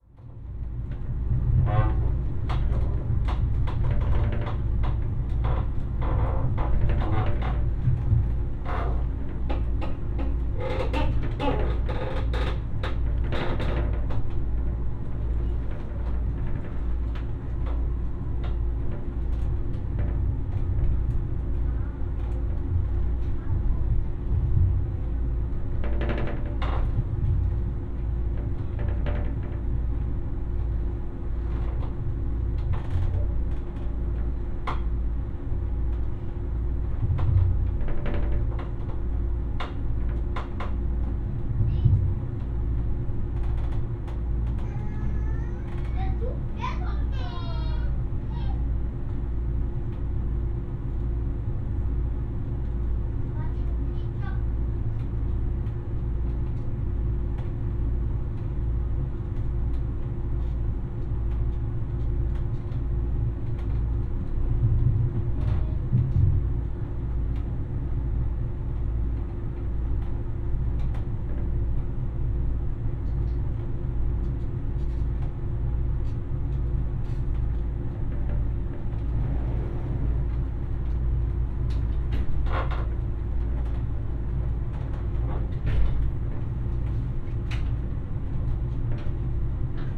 in a train near Hanau, Deutschland - drones and rattling things
in an ICE train from Berlin to Frankfurt, multiple things rattling and vibrating, engine drones. (Olympus LS5, EM172 binaurals)
21 January, 16:00